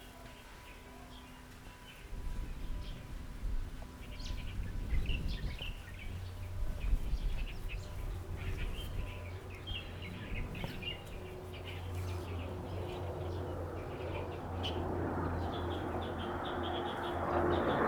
Houliao Elementary School, Fangyuan Township - Environmental sounds
Class voice, Aircraft flying through, Practice playing croquet, Birdsong, Distant machine noise, Zoom H6
Changhua County, Fangyuan Township, 頂芳巷, January 2014